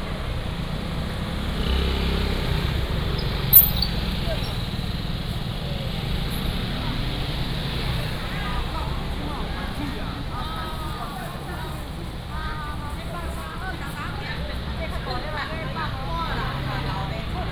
Xinyi Rd., Shengang Township - Traditional market
Walking in the market, Traffic sound, Vendors, motorcycle
Changhua County, Taiwan